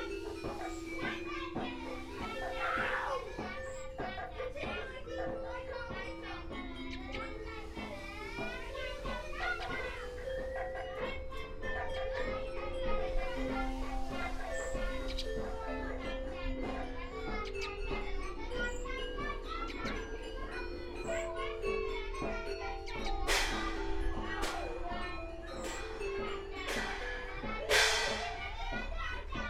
in the Forest Garden - blackbird chicks, school music session
blackbirds feed their demanding babies, children in the primary school next door bring their music lesson outside, cars drive past faster than the speed limit permits.